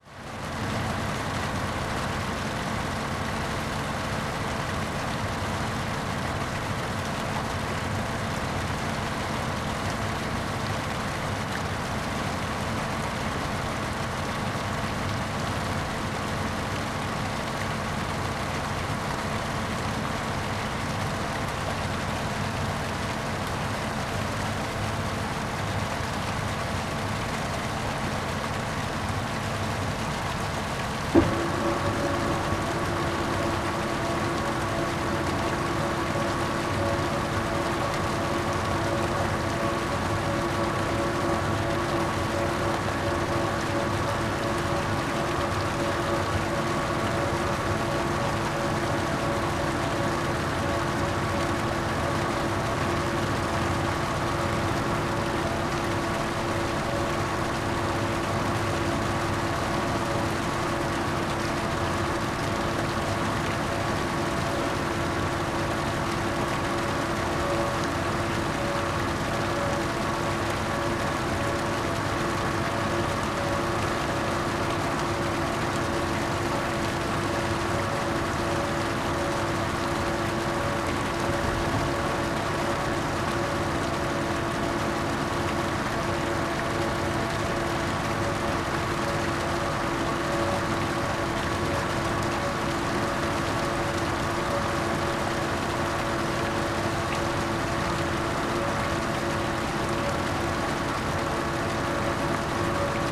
Mergenthalerring, Berlin, Deutschland - A100 - bauabschnitt 16 / federal motorway 100 - construction section 16: agitator and pump
cleaning the drum of a concrete mixer truck, pump separates concrete and water, water runs into the basin, agitator starts agitating
january 2014
Berlin, Deutschland, European Union, 22 January 2014, 16:30